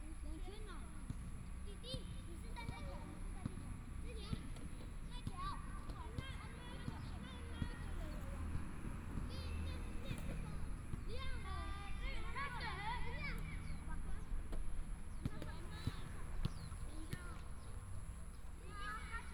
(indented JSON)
{
  "title": "中城國小, Yuli Township - Children In the school",
  "date": "2014-09-07 17:35:00",
  "description": "In the school, Children",
  "latitude": "23.32",
  "longitude": "121.32",
  "altitude": "137",
  "timezone": "Asia/Taipei"
}